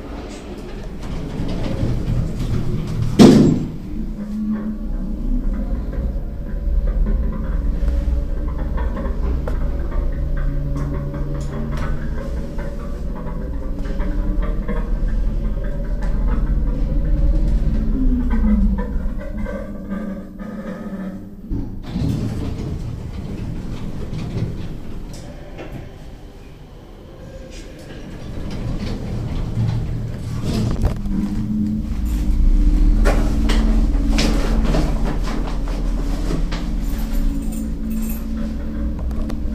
Aufzug im Rathaus Neukölln, bis zu 6. Stock und zurück